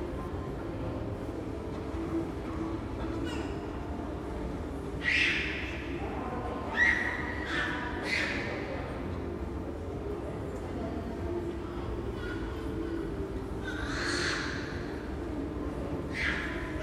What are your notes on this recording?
The Ambiance of Metro Station Jacques Brel: People, a child crying along the Muzak, Metros coming through the tunnell, trains passing by above.